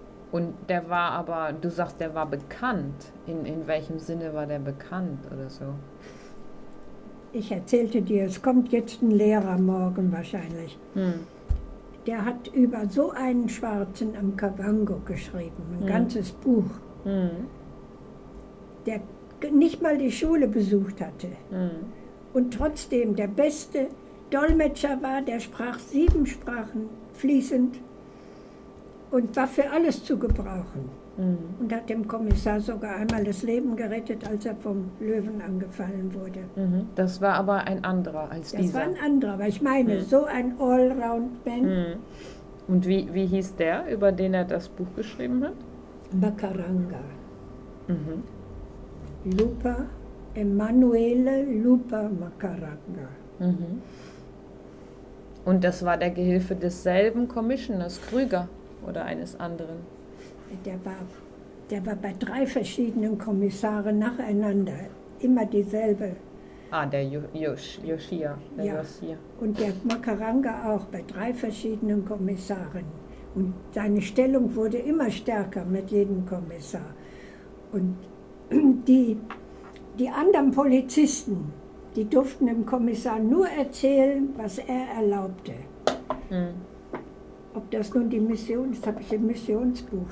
I’m with Maria Fisch in her small office full of books and papers helping her archiving some of her images from the Kavango on the computer. While doing so, I’m trying to squeeze as many stories as possible out of her; sometimes I’m successful… but Maria is a hard worker...
Maria Fisch spent 20 years in the Kavango area, first as a doctor then as ethnographer. She published many books on the history, culture and languages of the area.
Swakopmund, Namibia, 2 January 2009, 15:44